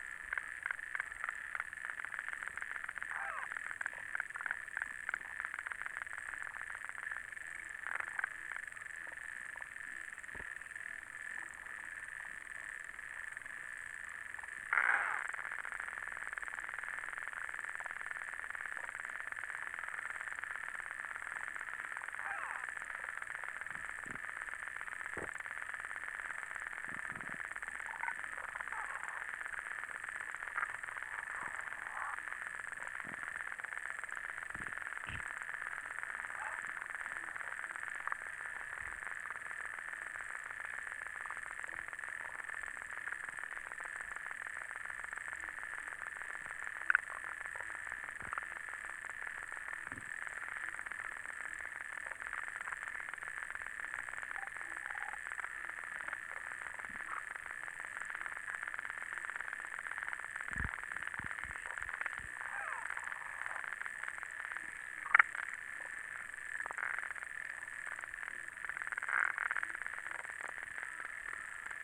Utena, Lithuania, lake underwater
underwater listening in city's lake